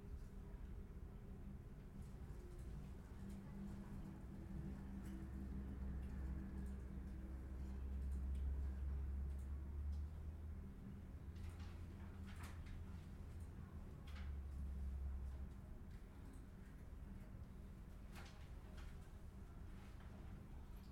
Flushing, Queens, NY, USA - Queens Library Quiet Room 2
Inside The Queens Library (main branch) "Quiet Room" designated for studying and reading.